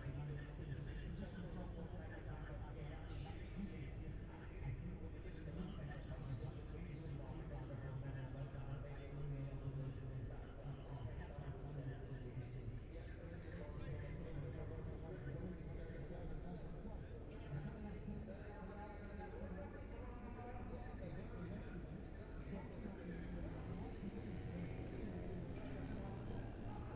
Silverstone Circuit, Towcester, UK - british motorcycle grand prix 2021 ... moto three ...
moto three qualifying two ... wellington straight ... olympus ls 14 integral mics ...
East Midlands, England, United Kingdom, 28 August